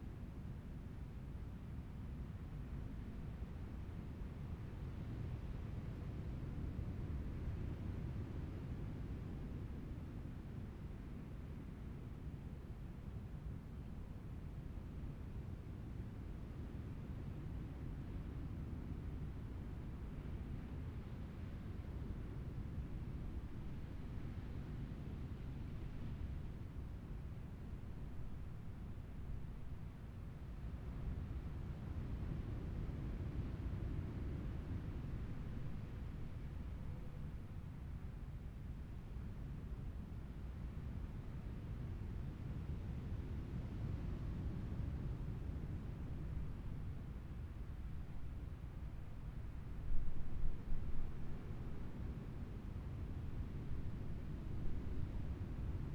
Taitung City, Taiwan - At the beach
At the beach, Sound of the waves, Zoom H6 M/S, Rode NT4